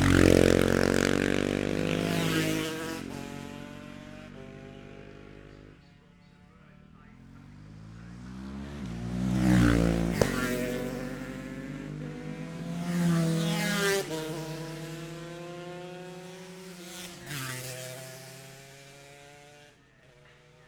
Jacksons Ln, Scarborough, UK - gold cup 2022 ... lightweight practice ...
the steve henshaw gold cup ... lightweight practice ... dpa 4060s clipped to bag to zoom h5 ...
16 September 2022